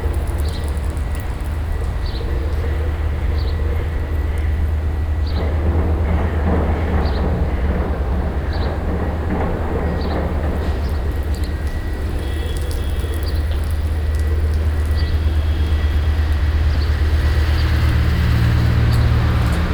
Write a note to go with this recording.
At the park entrance of the Museum in the morning time. The sound of a water sprinkler and cars passing by on the street nearby. soundmap d - social ambiences and topographic field recordings